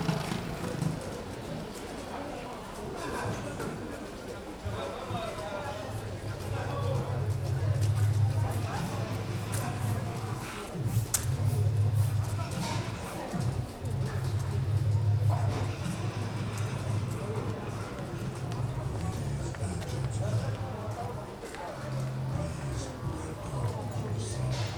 Rue de la République, Saint-Denis, France - Outside La Poste, Rue de la République
This recording is one of a series of recording, mapping the changing soundscape around St Denis (Recorded with the on-board microphones of a Tascam DR-40).